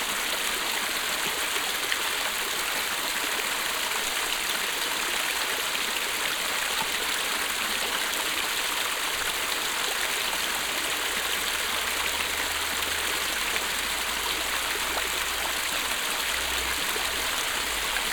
At the mills dam. The sound of the mill stream water floating thru and over the closed dam gates.
Enscherange, Staudamm, Wasser hinter Damm
Auf dem Staudamm. Das Geräusch des Wassers das übr und durch die geschlossenen Dammplatten fließt.
Enscherange, Rackes Millen, l’eau derrière le barrage
Le barrage du moulin. Le bruit de l’eau du ruisseau du moulin coulant à travers et par dessus les portes fermées du barrage.
Enscherange, Luxembourg, 23 September